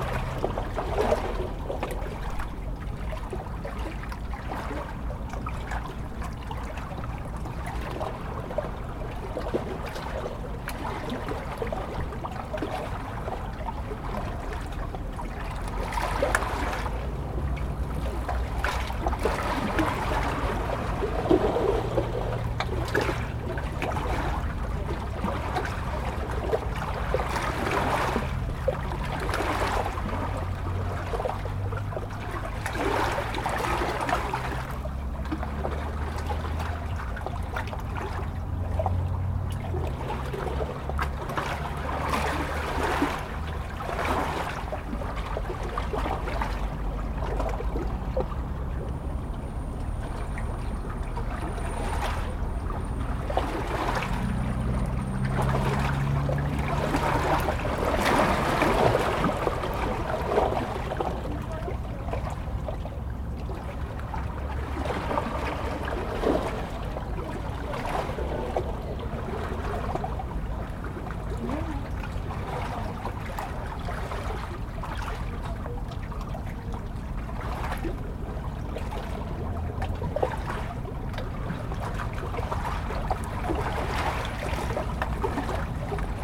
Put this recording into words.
Au bord de l'eau dans les pierres, clapotis de l'eau, circulation sur la route voisine.